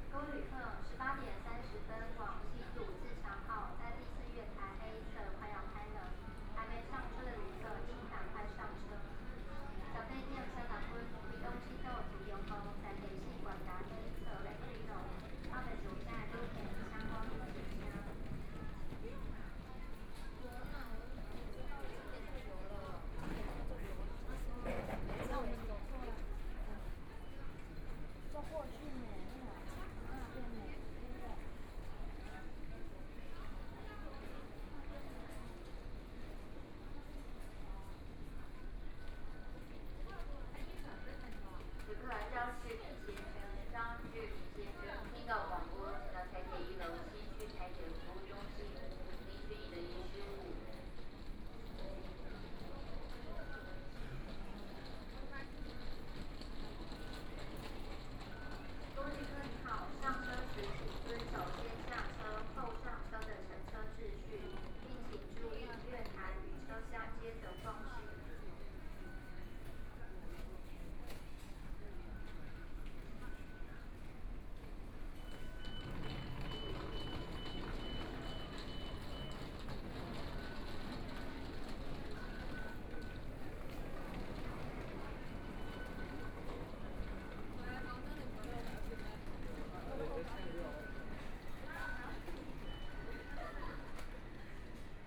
Taipei, Taiwan - Return home
Traditional New Year, A lot of people ready to go home, Taipei Main Station, MRT station entrances, Messages broadcast station, Zoom H4n+ Soundman OKM II
中正區 (Zhongzheng), 台北市 (Taipei City), 中華民國, 30 January 2014, 18:34